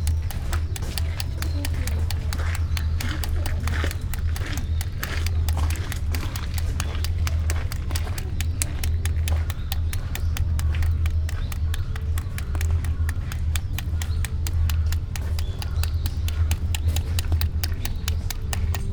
bamboo drain, Kodai-ji zen garden, Kyoto - water drop staccato
Kyōto-fu, Japan, 9 November 2014